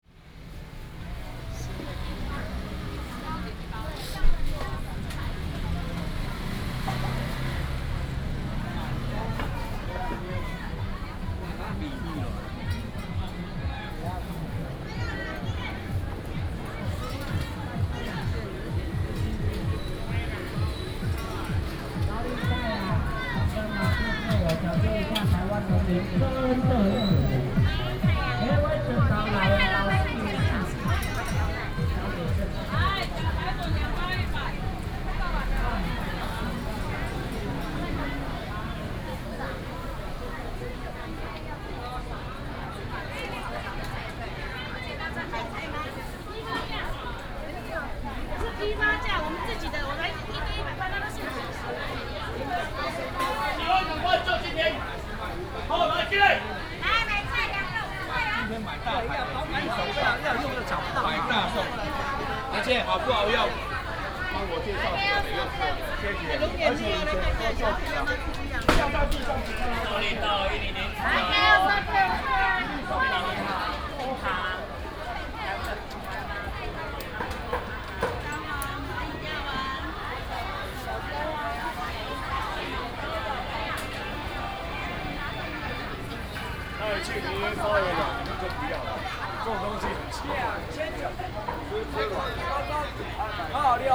Zhongshan Rd., Toufen City - vendors peddling
vendors peddling, Traditional Markets area, traffic sound, Binaural recordings, Sony PCM D100+ Soundman OKM II
Miaoli County, Taiwan, 30 August, 10:24